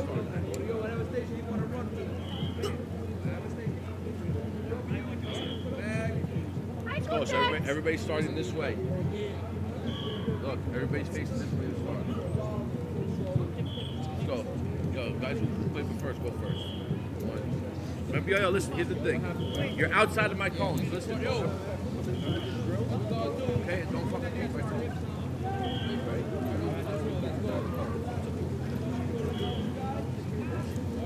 A young rugby team doing a four cone exercise of Karaoke, Backpedal, Shuffle, and Sprint.